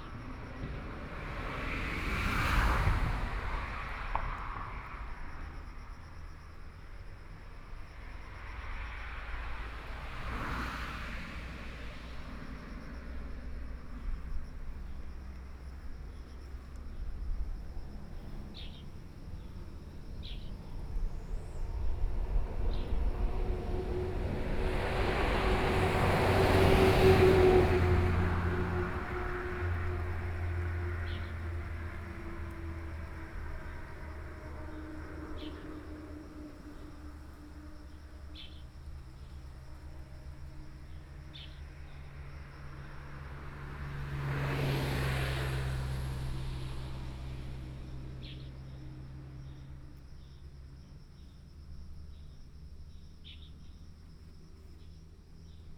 員山鄉中華村, Yilan County - Small village
In a small temple square, Traffic Sound
Sony PCM D50+ Soundman OKM II
Yilan County, Taiwan, 25 July 2014, ~14:00